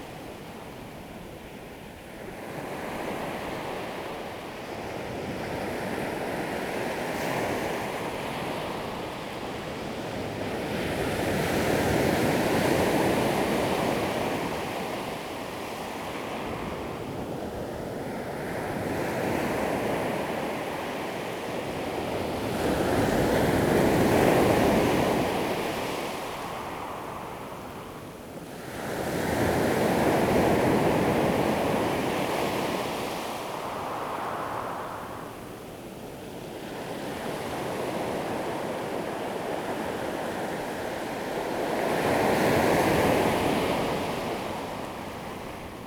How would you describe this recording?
sound of the waves, At the seaside, Beach, Zoom H2n MS+XY